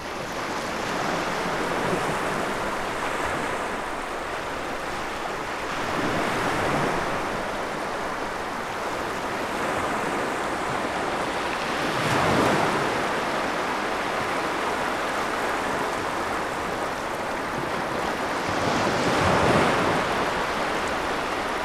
binz: strand - the city, the country & me: beach
waves
the city, the country & me: march 4, 2013